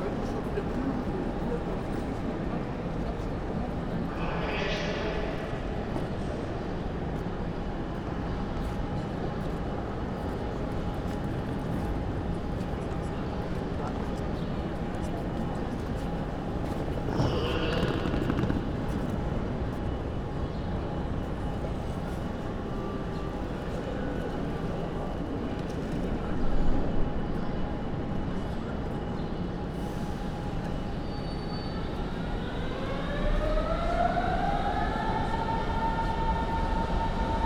berlin, europaplatz: main station - the city, the country & me: soundwalk through main station
binaural soundwalk through the main station
the city, the country & me: may 12, 2014
Berlin / Germany, Germany, May 2014